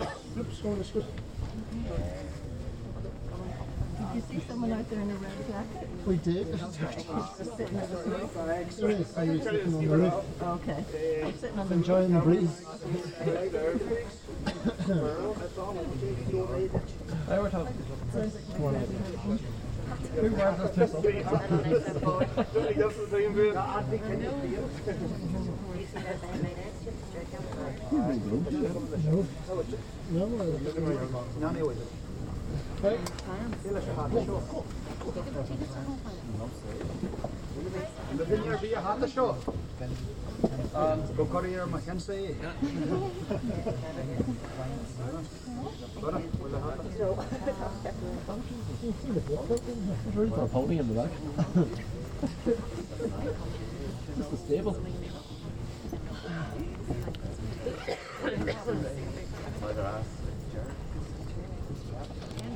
Recorded onto a Marantz PMD661 using its shitty internal mics.